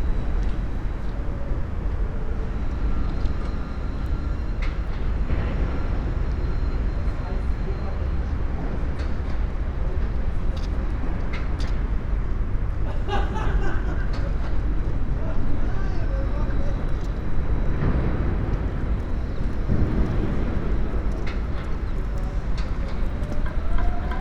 Paul-Thiede Ufer, Mitte, Berlin, Germany - black waters
construction works behind me and across the river Spree at S-Bahn station Jannowitzbrücke, red brick walls twinkle as sun reflects with filigree river waves pattern, spoken words
Sonopoetic paths Berlin
September 2015